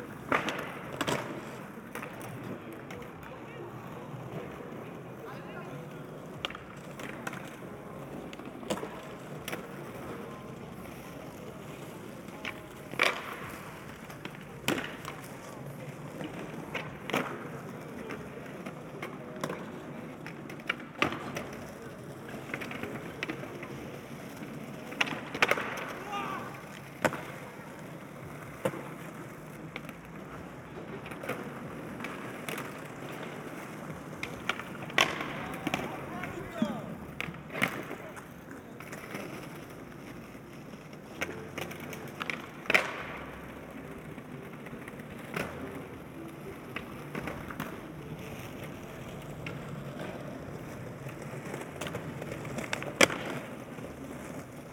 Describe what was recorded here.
Skateboarding on the esplanade, sunny day. Tech Note : Ambeo Smart Headset binaural → iPhone, listen with headphones.